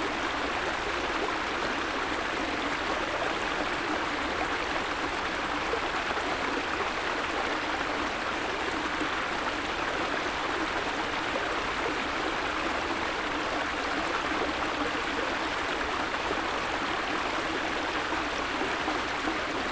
angermünde, ring: bach - the city, the country & me: creek
the city, the country & me: november 13, 2011
Angermünde, Germany, 13 November